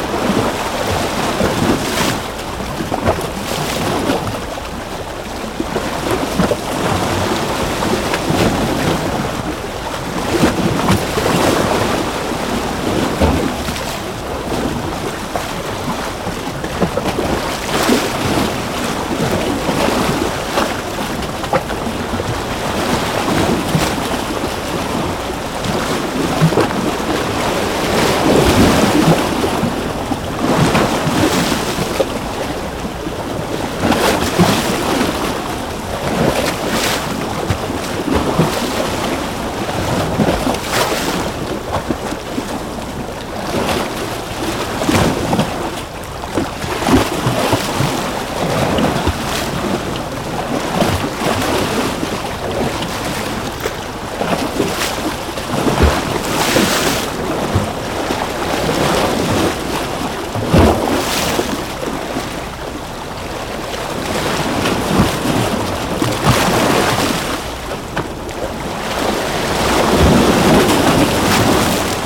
Muelle, Veracruz - Waves crashing on the rocks in Veracruz
Waves crashing on some concrete rocks at the entrance of the port of Veracruz (Mexico). Microphones very close from the water.